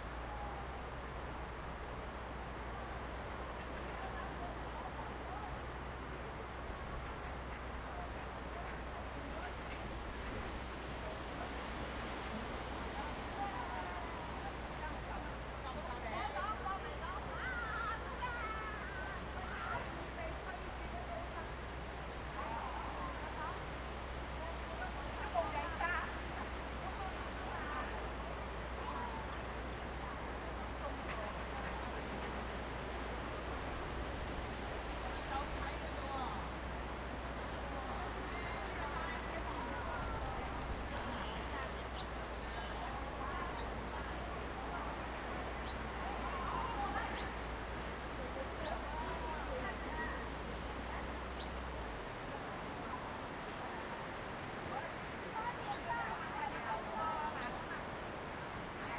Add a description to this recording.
Tourists, Forest and Wind, Zoom H2n MS+XY